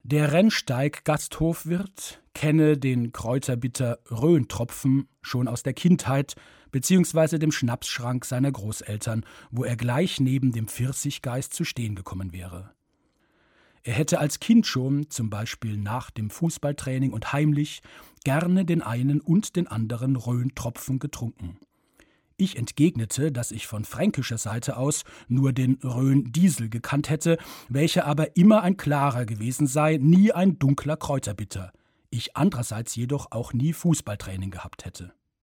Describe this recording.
Produktion: Deutschlandradio Kultur/Norddeutscher Rundfunk 2009